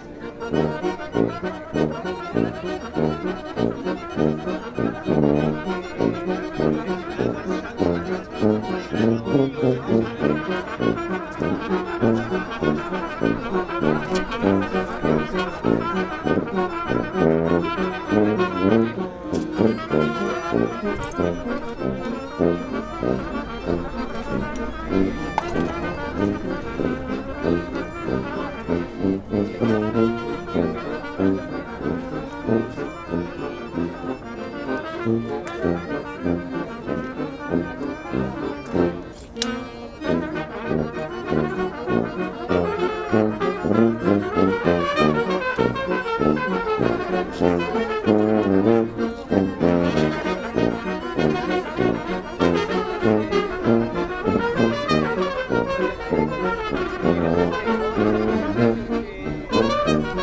berlin, maybachufer: speakers corner neukölln - the city, the country & me: balkan street band
balkan street band with accordions and brass section
the city, the country & me: april 12, 2011